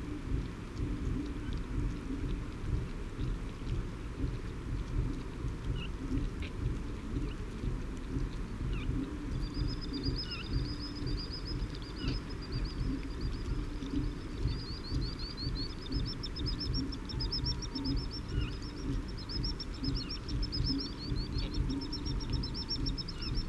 Isle of Mull, UK - lochan soundscape with ceilidh ...
lochan soundscape with ceilidh on going in the background ... fixed parabolic to minidisk ... bird calls and song ... redshank ... common sandpiper ... tawny owl ... greylag ... oystercatcher ... curlew ... grey heron ... the redshank may be in cop ...
26 April 2009, 22:00